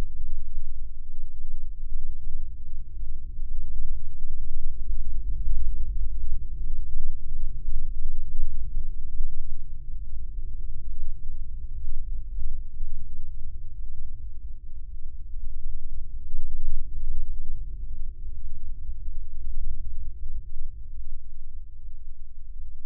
Dičiūnai, Lithuania, wooden fence
Abndoned sand quarry. Some wooden fences. Seismic microphone recording, very low frequancies...
Utenos apskritis, Lietuva, 9 May, 17:55